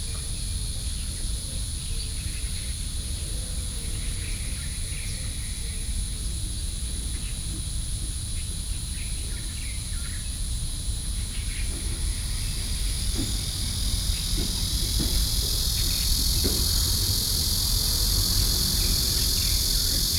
Hsing Tian Kong, Beitou - in front of the temple
in front of the temple Sony PCM D50 + Soundman OKM II